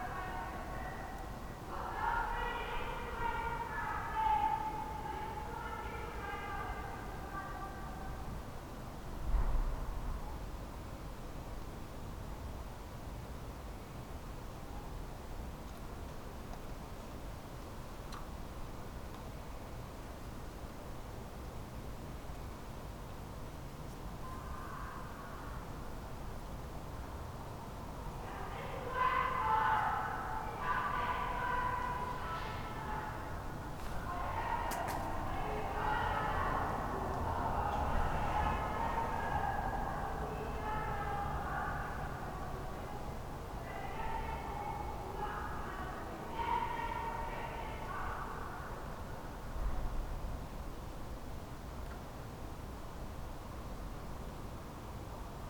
Berlin Bürknerstr., backyard window - night, shouting
she can be heard often, on quiet sunday afternoons or at night. sometimes i see her passing-by at my door.
(Sony PCM D50)